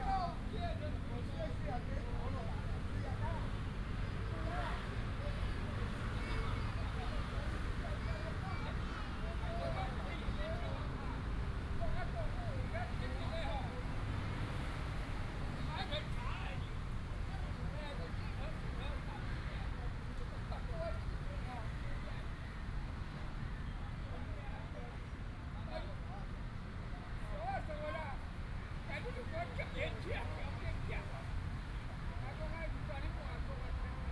新勢公園, Pingzhen Dist., Taoyuan City - in the Park
in the Park, Old man, Traffic sound